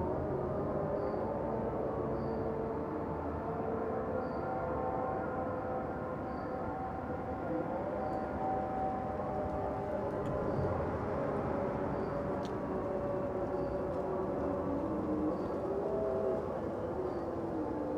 Maadi as Sarayat Al Gharbeyah, Maadi, Al-Qahira, Ägypten - prayers singing ...
recording @ 3:20 in the morning in maadi / cairo egypt -> two neumann km 184 + sounddevice 722
Cairo, Egypt, May 2012